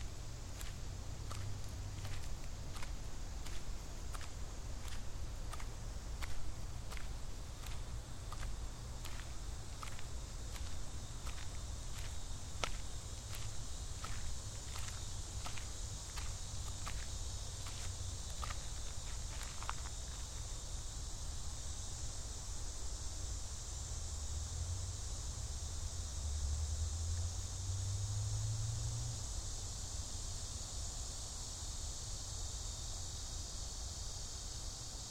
East Rock Park, New Haven, CT
WLD, World Listening Day, Recorded while walking through East Rock Park in New Haven, CT. Starts at my apartment and goes through the park and back.